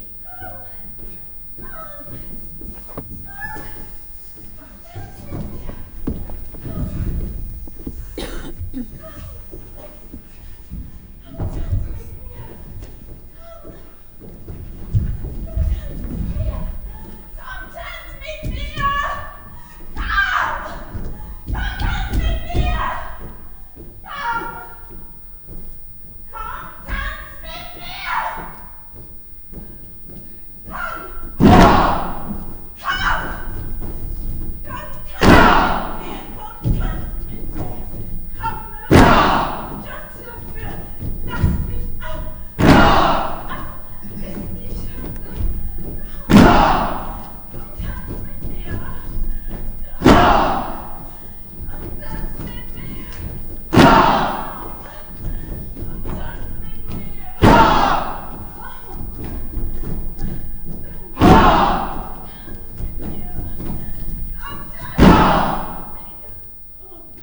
wuppertal, kurt-drees-str, opera
inside the opera, during the performance of the dance piece komm tanz mit mir by the pina bausch ensemble
soundmap nrw - social ambiences and topographic field recordings